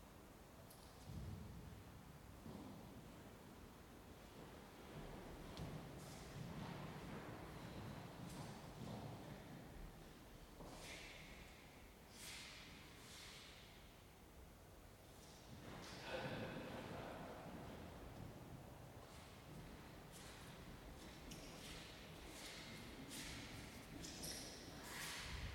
Via Wolkenstein, Bolzano BZ, Italia - 26.10.19 - Chiesa dei Cappuccini, interno
Interno della Chiesa dei Cappuccini. Il sacerdote spegne le candele e prepara la chiusura della Chiesa.
Registrato da Luisa Pisetta
BZ, TAA, Italia